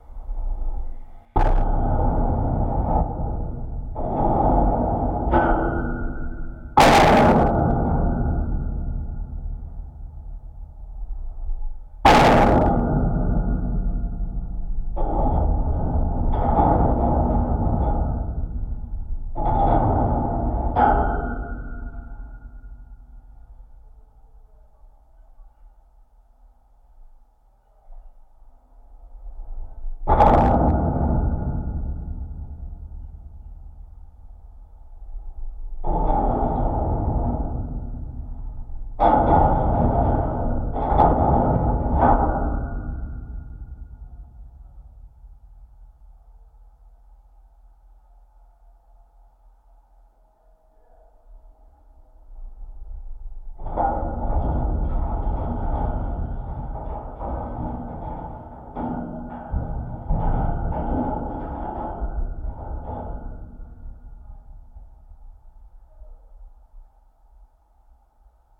The Masterpiece, Bentonville, Arkansas, USA - The Masterpiece
Geophone recording from the underside of The Masterpiece, a ridable work of art, that has been incorporated into a mountain bike trail in the Slaughter Pen network of trails. Bikes catch big air on this metal structure and then land hard.
Benton County, Arkansas, United States, 7 October 2021